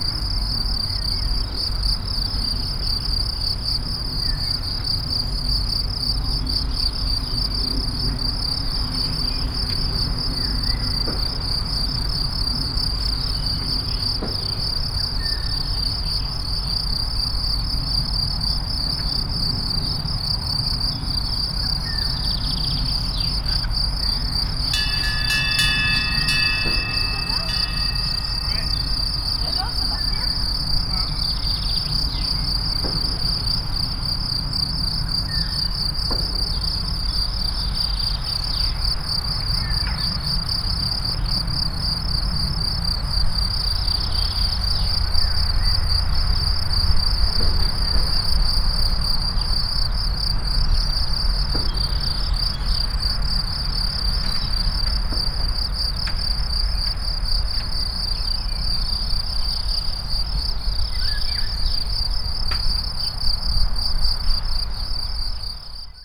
A39 highway, Aire du Jura face au Pavillon.
France, 1 May